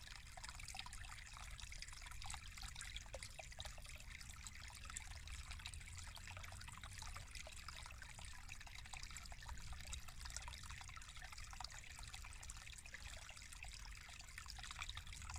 Ручей. Creek. Щелковский хутор, Нижний Новгород, Нижегородская обл., Россия - Ручей. Creek.